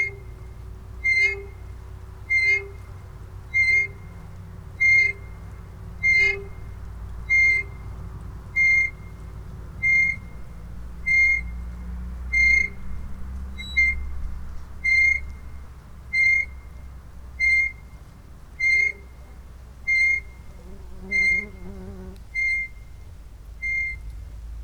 Lithuania, Sudeikiai, pontoon footbridge
swinging-singing pontoon footbridge